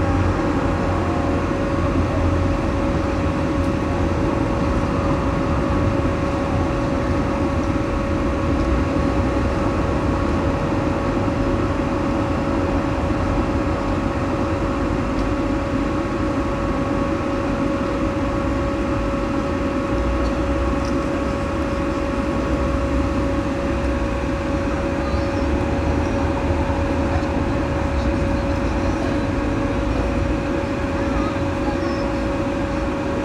Trg Svobode, Maribor, Slovenia - maribor2012 landmark: cona b
on several sites in the city for maribor2012 european capital of culture there are large inflated lit globes on trapezoidal wooden constructions. they are kept inflated with a constant fan that blends with the surrounding soundscape.
14 June 2012, ~18:00